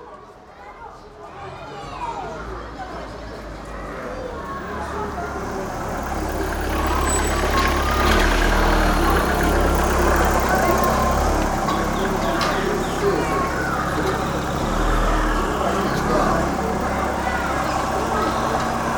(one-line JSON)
{"title": "Manlleu, Barcelona, España - Col-legi el Carme", "date": "2012-10-06 12:49:00", "description": "Col-legi el Carme", "latitude": "42.00", "longitude": "2.28", "altitude": "454", "timezone": "Europe/Madrid"}